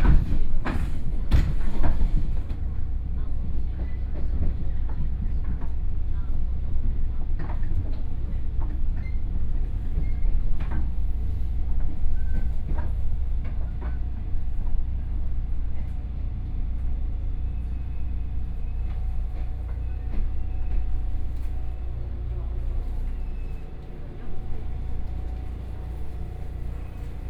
Changhua, Taiwan - Local Train
from Chenggong Station to Changhua Station, Zoom H4n+ Soundman OKM II
Changhua City, Changhua County, Taiwan